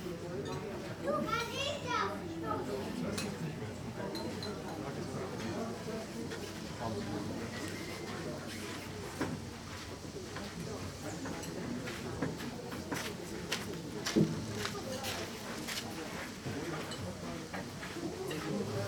berlin wall of sound, cafe garden at plaueninsel ferry crossing, 30/08/09
Berlin, Germany